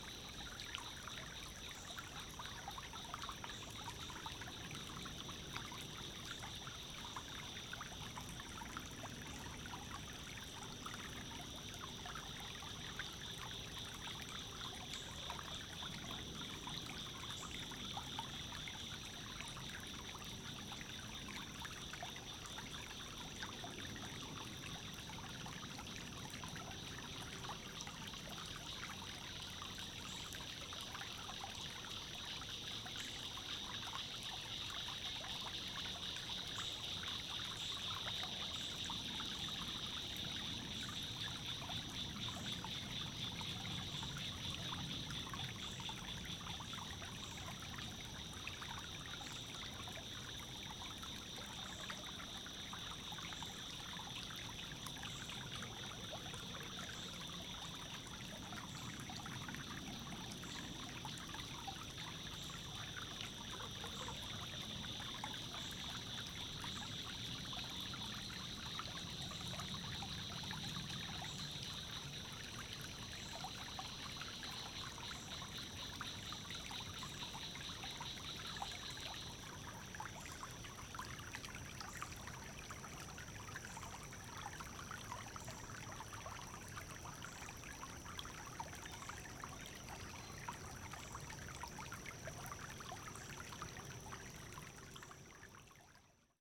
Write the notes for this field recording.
Recording from bank of Perunque Creek in Quail Ridge Park